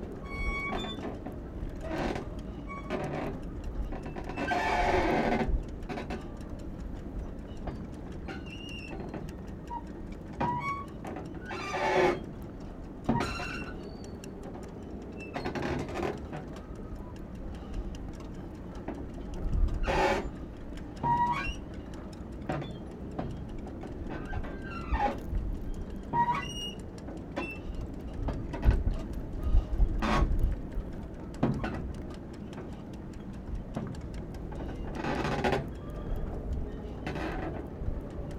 Innerstaden, Visby, Sweden - Harbor squeak
Squeaking metal constructions in Visby harbor. Flag masts are ticking on background.
26 October 2014, ~17:00